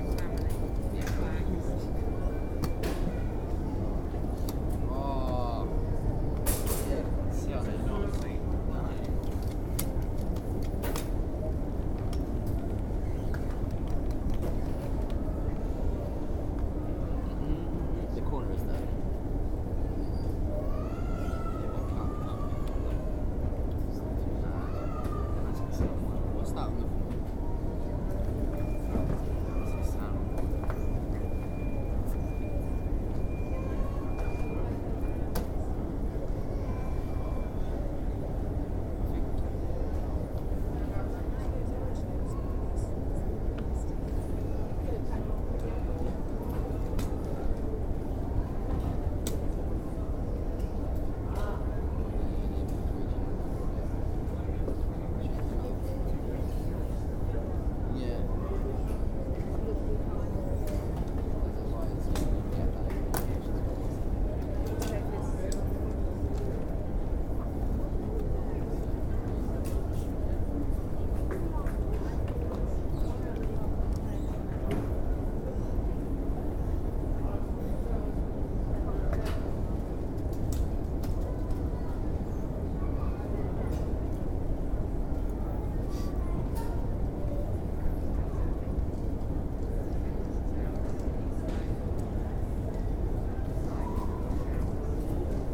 Airport Nice Cote D'Azur (NCE), Rue Costes et Bellonte, Nice, France - Waiting at baggage reclaim
Waiting for the bags to turn up on the carousel, I found myself listening to how quiet the space was, comparatively. Folk waited patiently, a bit bored, listless in the heat, and the carousel didn't have any of the normal shrieks, squeaks, or bangs, but purred quite quietly along, bringing people their luggage in a leisurely way.